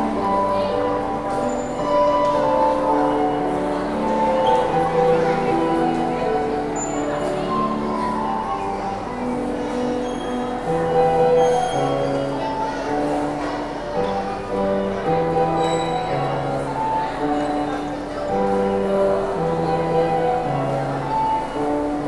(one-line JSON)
{"title": "Shopping Aricanduva - Avenida Aricanduva - Jardim Marilia, São Paulo - SP, Brasil - Pianista em uma praça de alimentação", "date": "2019-04-06 19:47:00", "description": "Gravação de um pianista feita na praça de alimentação do Shopping Interlar Aricanduva no dia 06/04/2019 das 19:47 às 19:57.\nGravador: Tascam DR-40\nMicrofones: Internos do gravador, abertos em 180º", "latitude": "-23.56", "longitude": "-46.50", "altitude": "765", "timezone": "America/Sao_Paulo"}